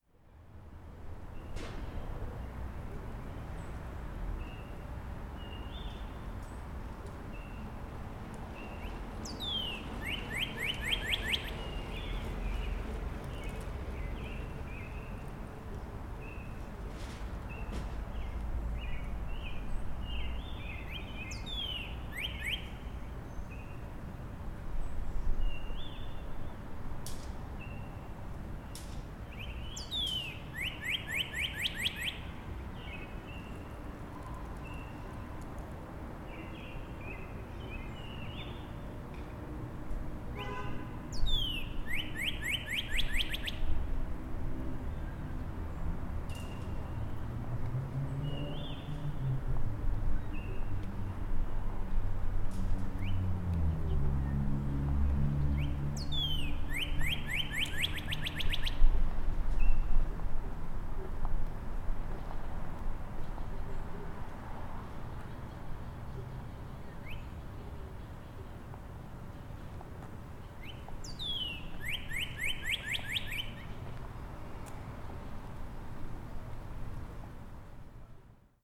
Bird singing on a quiet street during the lockdown.
Golden Horseshoe, Ontario, Canada, 16 May 2020, 15:30